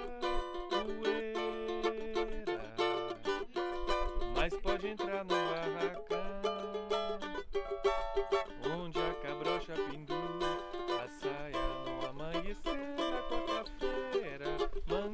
La Salvetat sur Agout, Benjamin au cavaquinho sur sa terrasse